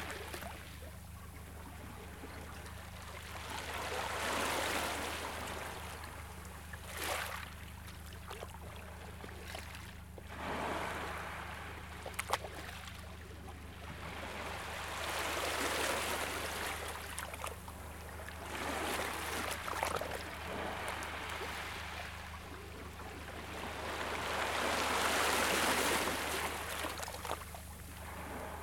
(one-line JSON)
{"title": "Saltdean, East Sussex, UK - Saltdean shoreline", "date": "2015-04-08 10:36:00", "description": "Standing on a rock in the water, at the point where the waves were breaking on the shore, and facing down the beach so that waves approach form the left and fade away from the right.\n(zoom H4n internal mics)", "latitude": "50.80", "longitude": "-0.04", "altitude": "3", "timezone": "Europe/London"}